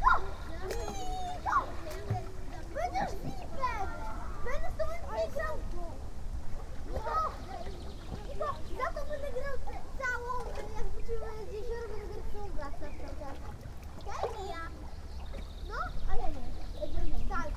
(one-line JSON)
{"title": "Choczewo, Polska - pier at Choczewskie lake", "date": "2019-06-15 12:05:00", "description": "kids playing in the lake at the public beach in Choczewo. one kid trying to make a somersault but scared to actually make the move. other kids cheering for him. in the end he jumps into the water but fails to make the stunt. (roland r-07)", "latitude": "54.74", "longitude": "17.93", "timezone": "GMT+1"}